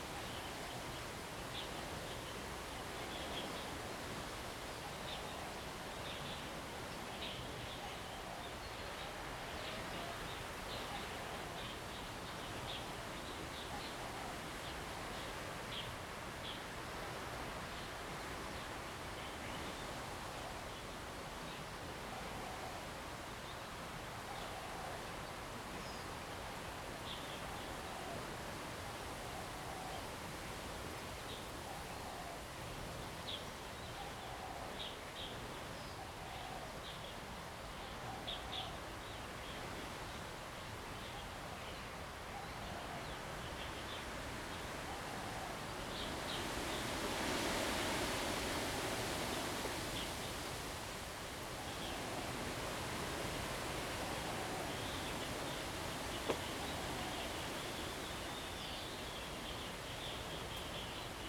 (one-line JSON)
{"title": "Jinhu Township, Kinmen County - Birds singing", "date": "2014-11-03 07:10:00", "description": "Stream flow sound, Birds singing, wind\nZoom H2n MS+XY", "latitude": "24.46", "longitude": "118.30", "altitude": "7", "timezone": "Asia/Taipei"}